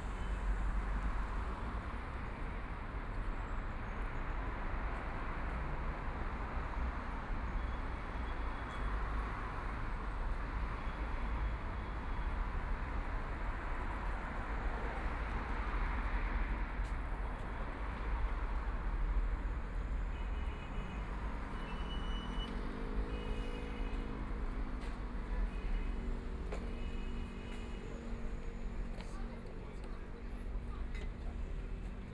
South Tibet Road, Shanghai - on the road
Walking on the road, Traffic Sound, Binaural recording, Zoom H6+ Soundman OKM II